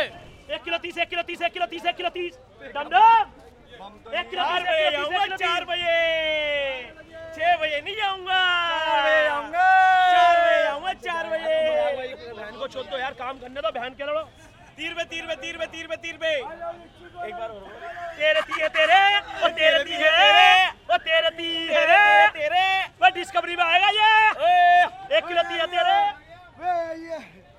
Main Bazar Rd, Aram Bagh, Ratan Lal Market, Kaseru Walan, Paharganj, New Delhi, Delhi, Inde - New Deli - Pahar Ganj - le vendeur de Litchees
New Deli - Pahar Ganj - le vendeur de Litchees